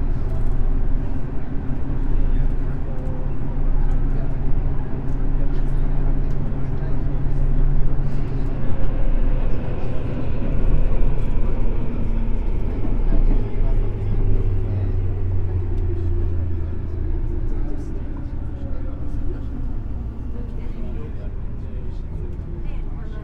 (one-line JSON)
{"title": "subway, tokyo - night train", "date": "2013-11-14 22:13:00", "description": "from Kamiyacho to Ueno station", "latitude": "35.66", "longitude": "139.75", "altitude": "30", "timezone": "Asia/Tokyo"}